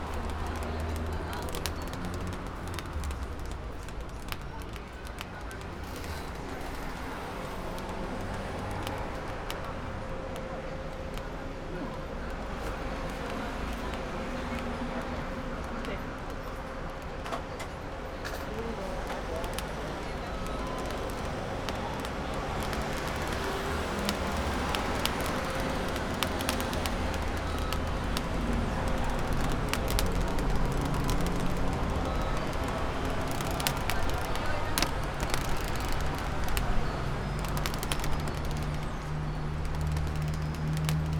Calle Jose Hernandez Alfonso, Santa Cruz de Tenerife - entrance to a store
Recorded at an entrance to a store. Right at the door there was a small fan, with some plastic strips attached to it. It made some interesting crackling sounds that you can hear over the entire recording. The detuned bell is also part of the store, triggered as customers went in and out. Conversations of the customers and passersby. Some street noise in the background. This is a rather busy part of the city. Recorder was placed right at the fan. (sony d50)
Santa Cruz de Tenerife, Spain, 9 September 2016, ~15:00